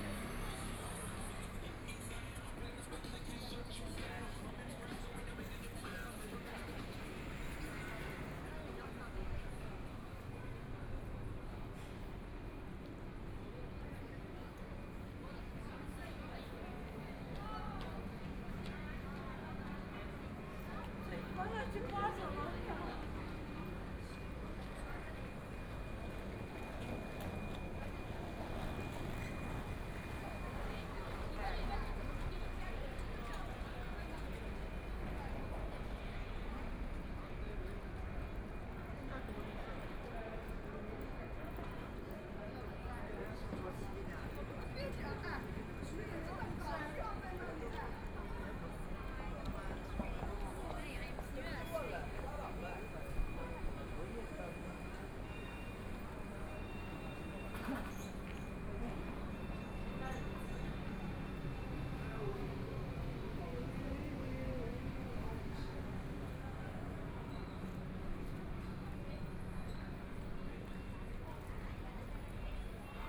Walking through the old neighborhoods, Traffic Sound, Shopping street sounds, The crowd, Bicycle brake sound, Trumpet, Brakes sound, Footsteps, Bicycle Sound, Motor vehicle sound, Binaural recording, Zoom H6+ Soundman OKM II
Huangpu, Shanghai, China, 25 November 2013